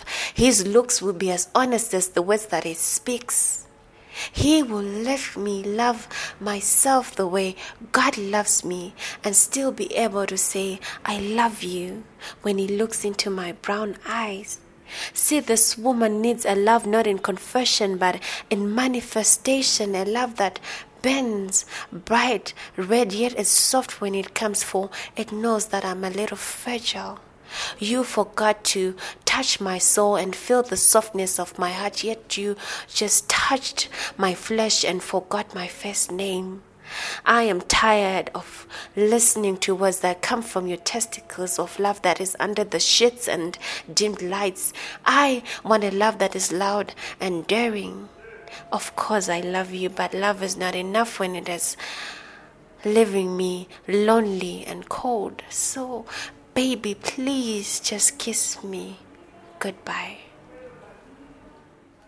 The Book Cafe, Harare, Zimbabwe - More Blessings, “Kiss me good-bye…”
More Blessings, “Kiss me good-bye…”
more poems and an intro archived at :